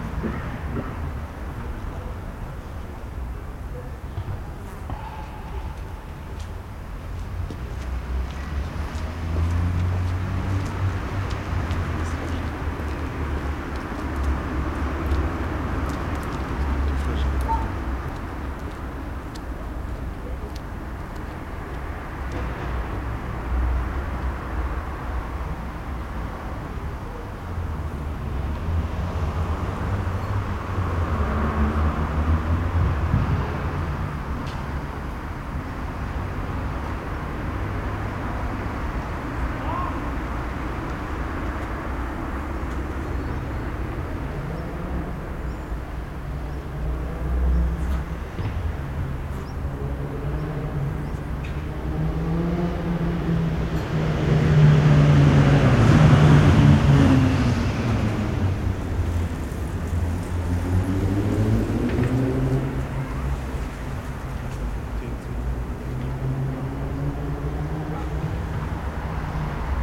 leipzig lindenau, spielplatz am karl-heine-kanal nahe gießerstraße
ein spielplatz am karl-heinekanal an der brücke gießerstraße.
31 August, 15:30